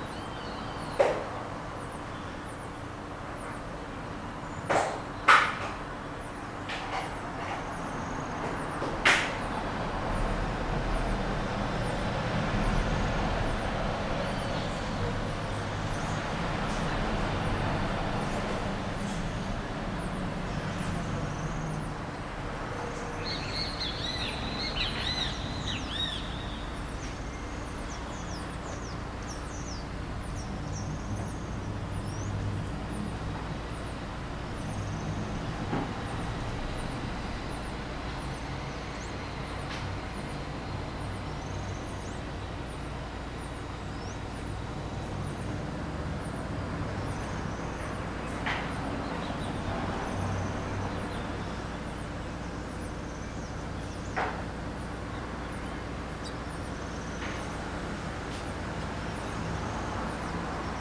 São Domingos, Niterói - Rio de Janeiro, Brazil - Birds in the area. Pássaros na área.
Domingo. Acordo de manhã cedo e os pássaros cantam na área. Gravo.
Sunday morning and the birds are singing in the area. I record.